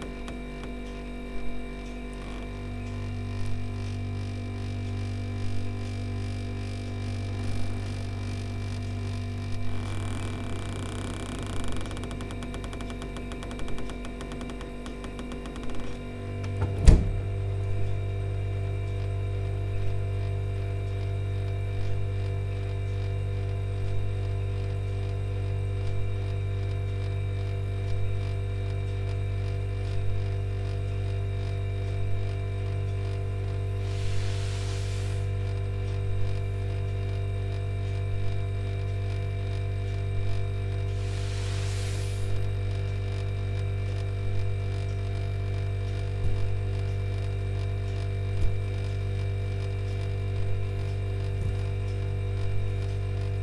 Maribor, Slovenia, Slomškov trg - Refrigerator jam
From the series of recordings of jamming with different ordinary objects - this one is "playing" a very loud old refrigerator - leaning it in different directions, opening it's doors, letting it sing on its own...
4 July 2008, 21:30